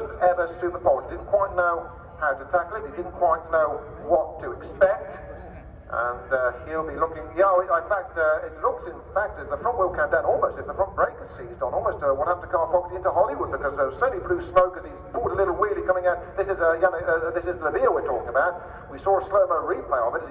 Unnamed Road, Derby, UK - WSB 1999 ... Superbikes ... Superpole ... (contd) ...
WSB 1999 ... Superbikes ... Superpole ... (contd) ... one point stereo to minidisk ...
England, United Kingdom